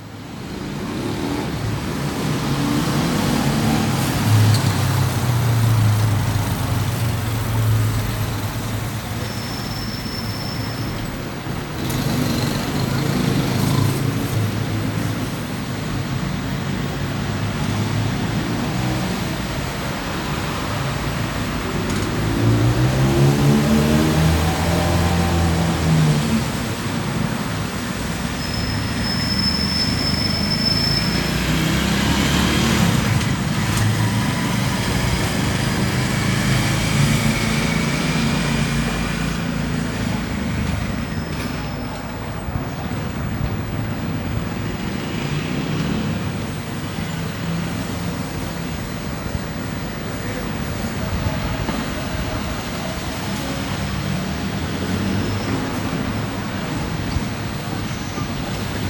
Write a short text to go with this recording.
Urban soundscape in the morning of the pontevedra neighborhood in the city of Bogota, where you can hear the sound of the wind and traffic, where you can hear the sounds of cars and motorcycles. You can also hear the sound of street vendors and some voices of passersby.